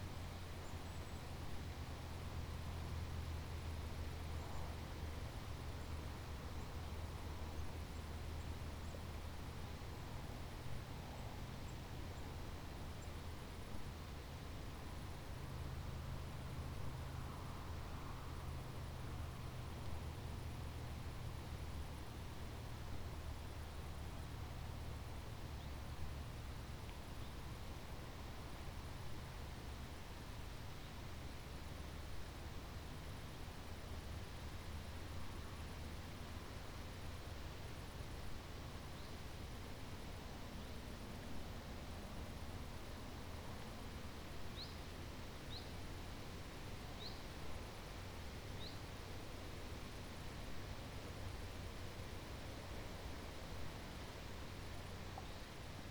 serwest: serwester see - the city, the country & me: lakeside
gentle wind through trees
the city, the country & me: september 5, 2010